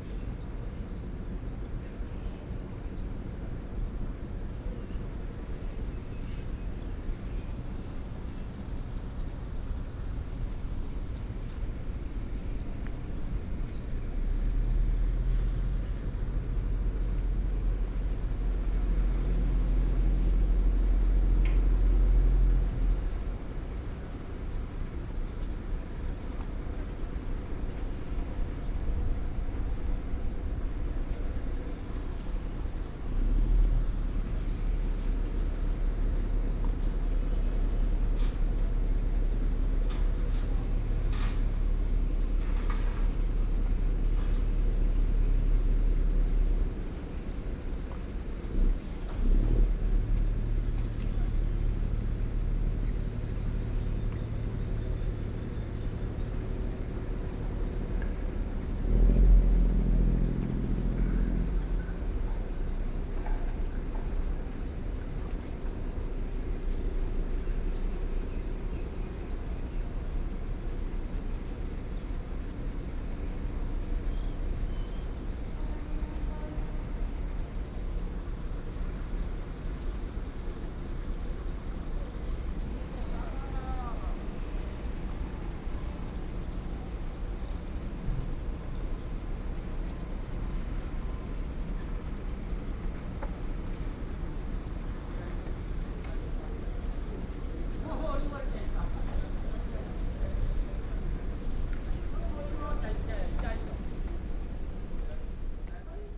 和一路131巷, Keelung City - At the pier
At the pier, Fishing, Old people